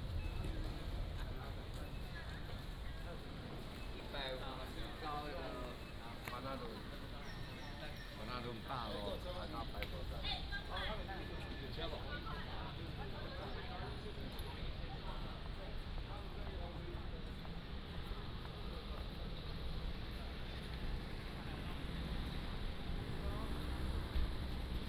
員林車站, Yuanlin City - Walking at the station
From the station platform to walk outside
Yuanlin City, Changhua County, Taiwan, 25 January 2017, 08:37